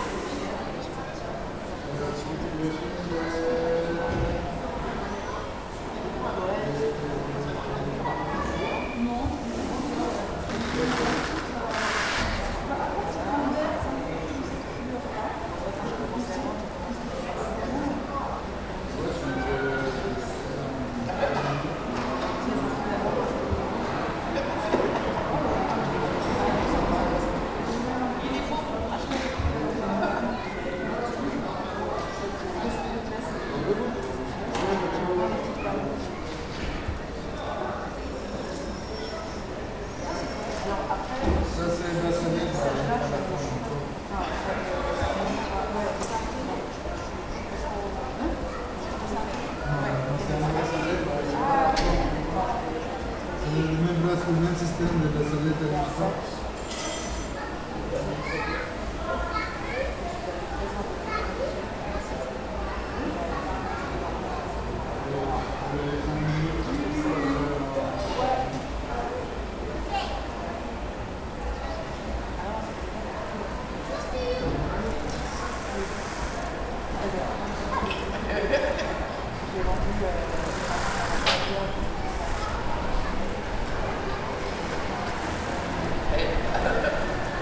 {"title": "Rue du Jambon, Saint-Denis, France - Rue du Jambon Graffiti Area", "date": "2019-05-25 11:50:00", "description": "This recording is one of a series of recording, mapping the changing soundscape around St Denis (Recorded with the on-board microphones of a Tascam DR-40).", "latitude": "48.93", "longitude": "2.36", "altitude": "33", "timezone": "Europe/Paris"}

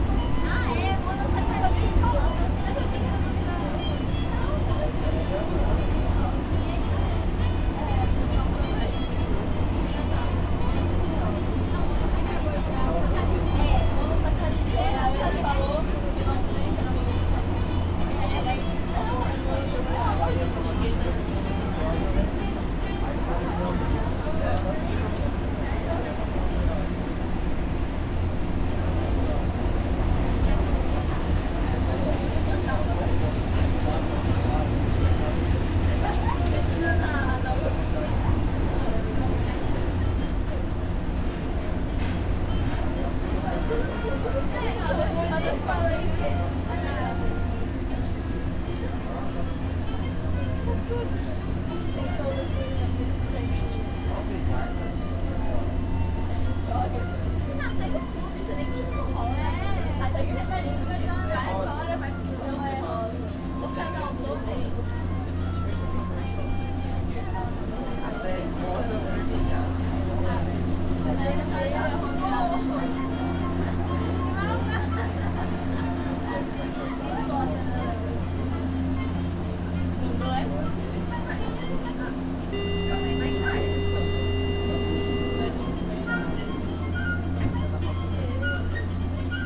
{"title": "R. Vergueiro - Jardim Vila Mariana, São Paulo - SP, 04101-300, Brasil - São Paulos Subway", "date": "2018-10-03 13:47:00", "description": "Inside the São Paulo subway train, between the Trianon-masp and Cháraca klabin stations. Recorded with TASCAM DR-40 with internal microphones", "latitude": "-23.59", "longitude": "-46.63", "altitude": "787", "timezone": "America/Sao_Paulo"}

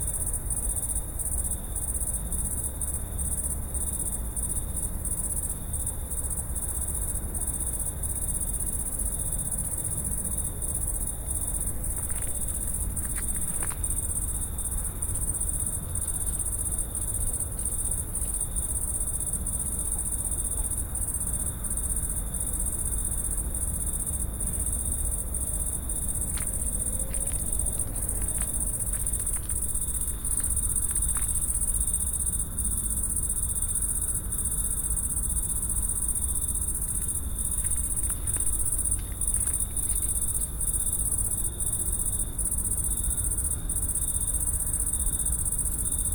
{"title": "path of seasons, Piramida, Maribor - august crickets", "date": "2013-08-08 10:29:00", "description": "and night creature sounding dry leaves behind walnut tree", "latitude": "46.57", "longitude": "15.65", "altitude": "363", "timezone": "Europe/Ljubljana"}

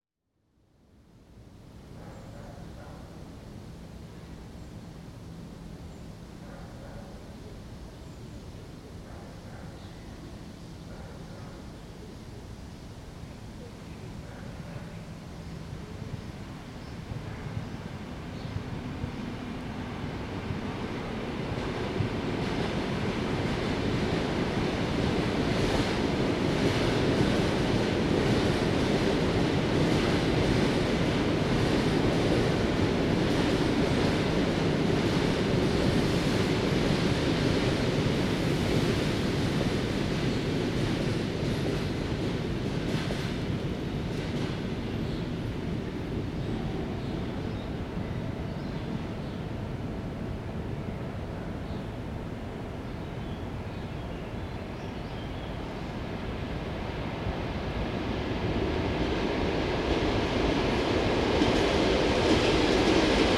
{"title": "Королёв, Московская обл., Россия - Two suburban electric trains", "date": "2021-05-19 13:58:00", "description": "Forest area near the railway. Two suburban electric trains are moving in opposite directions. The singing of birds and the barking of a dog can be heard.\nRecorded with Zoom H2n, surround 2ch mode.", "latitude": "55.93", "longitude": "37.83", "altitude": "162", "timezone": "Europe/Moscow"}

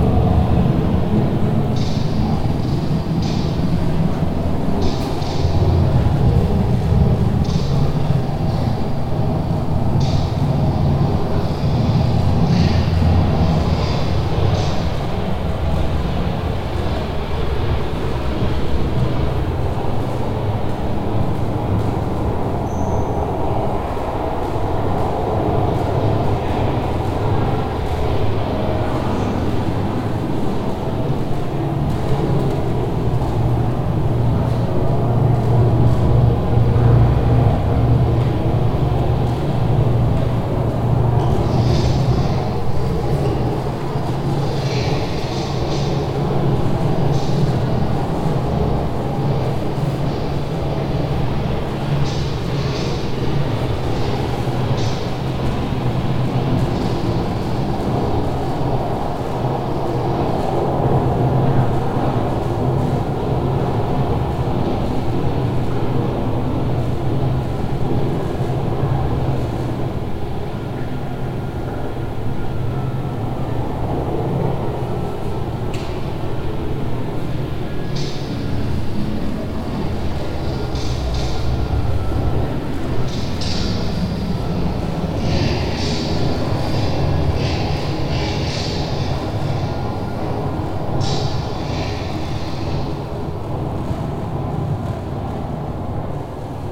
{"title": "cologne, museum ludwig, media works - cologne, museum ludwig, mike kelley - media installation", "description": "media installation by mike kelley\nmedia works at the contemporary art museum ludwig, cologne", "latitude": "50.94", "longitude": "6.96", "altitude": "57", "timezone": "Europe/Berlin"}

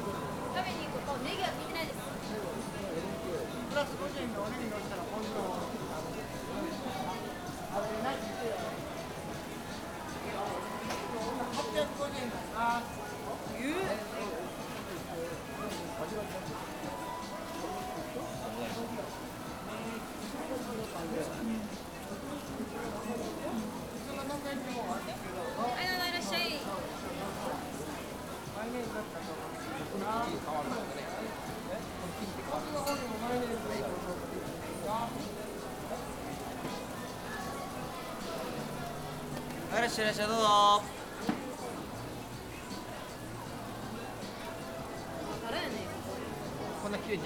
{"title": "Osaka Nanba district, shopping arcade - Takoyaki stall", "date": "2013-03-31 13:42:00", "description": "a stall selling takoyaki - grilled octopus. cooks taking orders, customers talking, sizzle of frying pans.", "latitude": "34.67", "longitude": "135.50", "altitude": "18", "timezone": "Asia/Tokyo"}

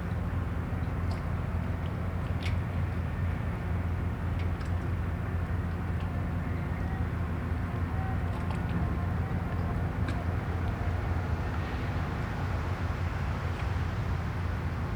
{"title": "Snug Harbor", "date": "2012-01-13 10:33:00", "description": "Jetty. waves, distant industry, traffic, passing ship", "latitude": "40.65", "longitude": "-74.10", "altitude": "2", "timezone": "America/New_York"}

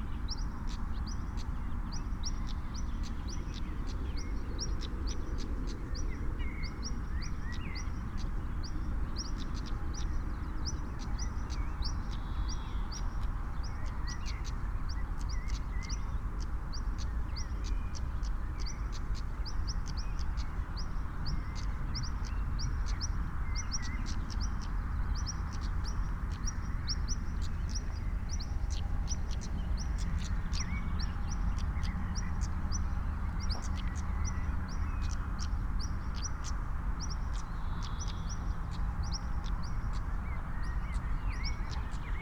{"title": "Am Sandhaus, Berlin Buch - European stonechat (Schwarzkehlchen)", "date": "2020-06-28 19:55:00", "description": "near Moorlinse pond, two or more European stonechat (deutsch: Schwarzkehlchen) calling in the meadows, among others. noise of the nearby Autobahn, a train is passing by in the distance\n(Sony PCM D50, Primo EM172)", "latitude": "52.64", "longitude": "13.48", "altitude": "54", "timezone": "Europe/Berlin"}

